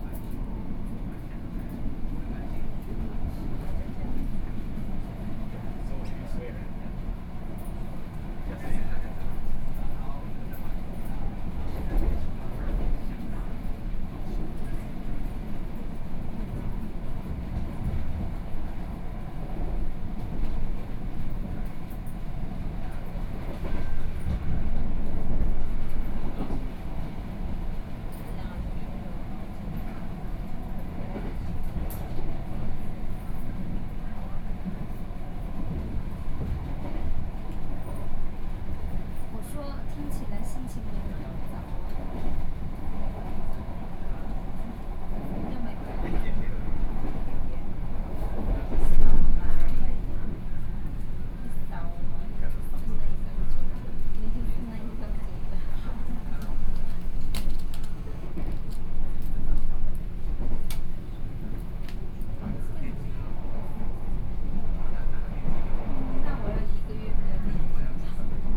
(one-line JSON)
{"title": "Pingzhen, Taoyuan County - Local Express", "date": "2013-08-14 16:03:00", "description": "from Puxin Station to Zhongli Station, Sony PCM D50 + Soundman OKM II", "latitude": "24.94", "longitude": "121.21", "altitude": "148", "timezone": "Asia/Taipei"}